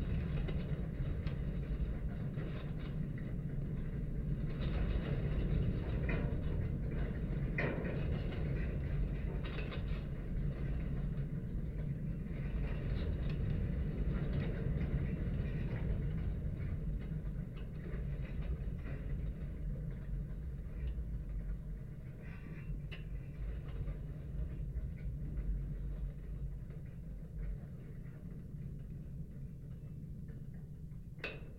Mogan, Gran Canaria, fence at surveying site
contact microphone recording